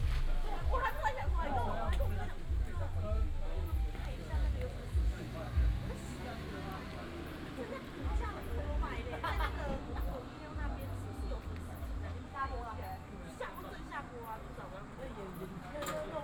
Xindian, Shitan Township, Miaoli County - Parking lot
Parking lot in convenience store, traffic sound, Many high school students gather in chat, Many heavy motorcycle enthusiasts gather here to chat and take a break, Binaural recordings, Sony PCM D100+ Soundman OKM II